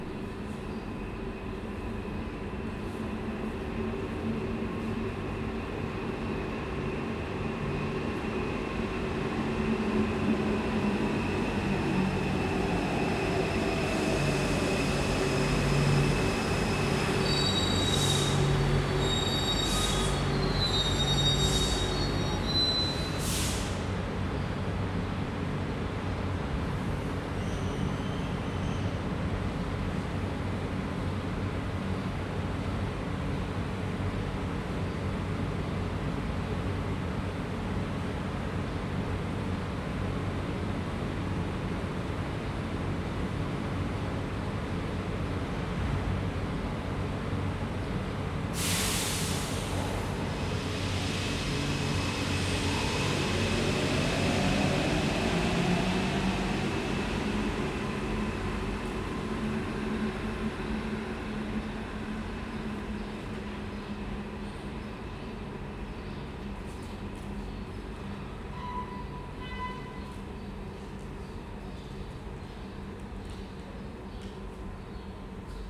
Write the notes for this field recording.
Metro M2 Riponne Station outside, SCHOEPS MSTC 64 U, Sonosax préamp Edirol R09, by Jean-Philippe Zwahlen